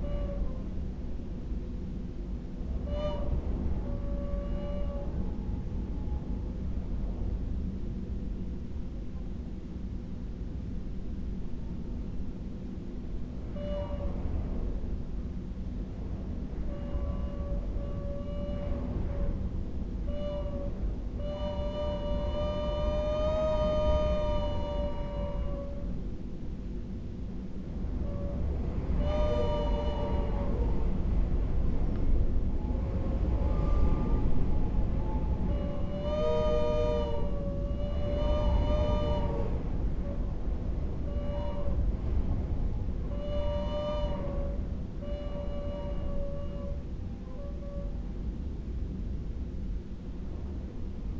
Dumfries, UK - whistling window seal ...
whistling window seal ... in double glazing unit ... farmhouse tower ... olympus ls 14 integral mics on mini tripod ...